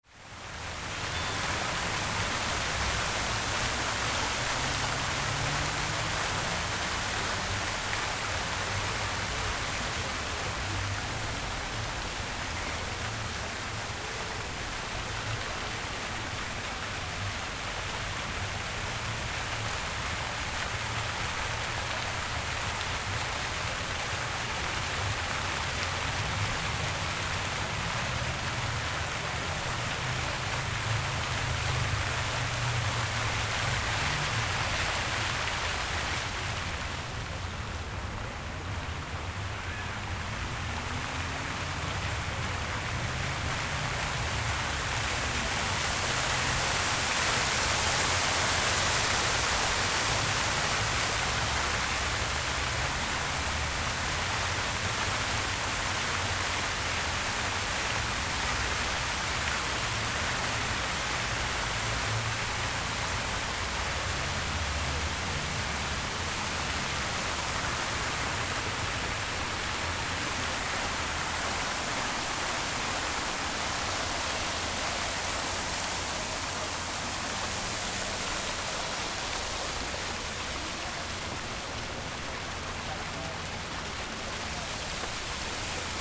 Treffpunkt Chill und Essen und Musik und typisch Welschland, französisch ist einfach sinnlich
Lausanne, Switzerland